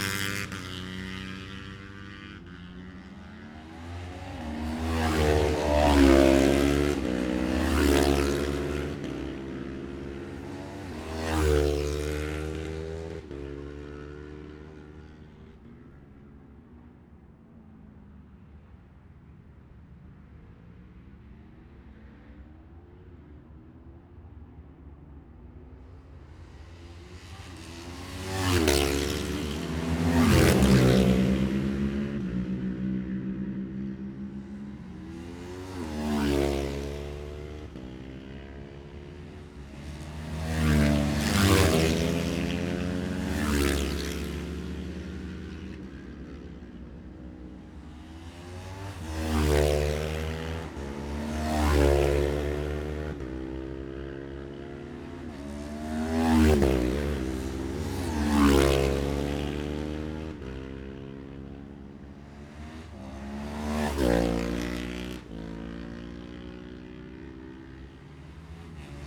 Jacksons Ln, Scarborough, UK - Gold Cup 2020 ...
Gold Cup 2020 ... Twins qualifying ... Monument Out ...